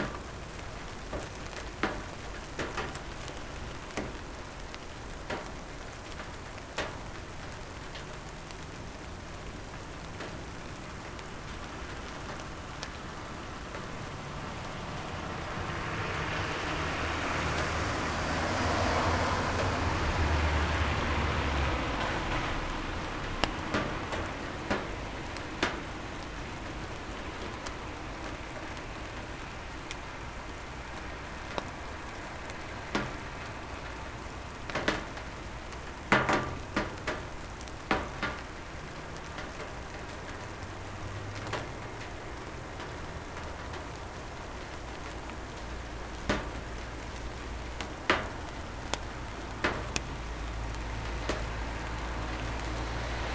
Mesaanitie, Oulu, Finland - Summer rain
Calm summer rain recorded from my open window. Cars driving by. Zoom H5, default X/Y module